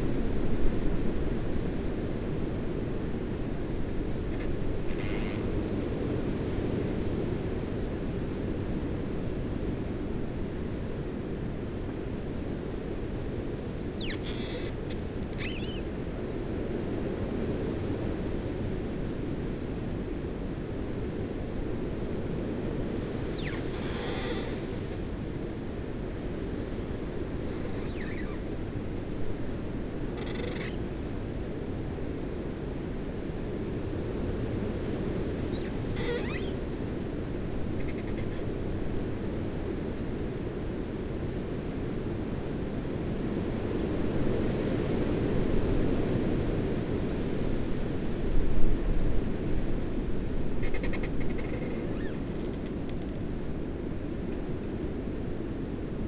Luttons, UK - Humpback tree ...
Branches rubbing and creaking in a gale ... lavalier mics in a parabolic ...
Malton, UK, 29 January 2016, ~09:00